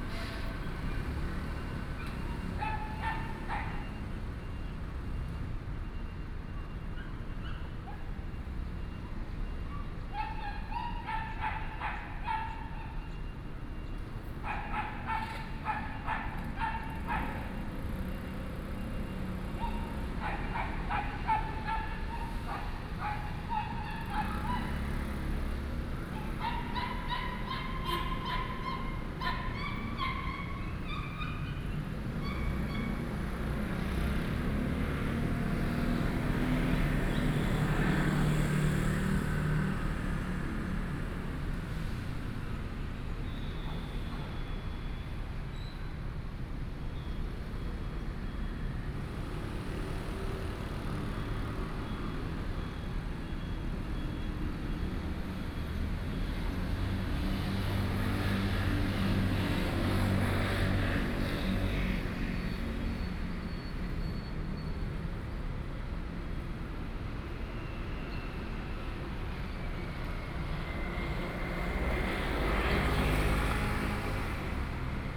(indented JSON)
{
  "title": "Dayong Rd., Yancheng Dist. - Dogs barking",
  "date": "2014-05-14 07:36:00",
  "description": "Traffic Sound, Dogs barking, In the Square",
  "latitude": "22.63",
  "longitude": "120.28",
  "altitude": "16",
  "timezone": "GMT+1"
}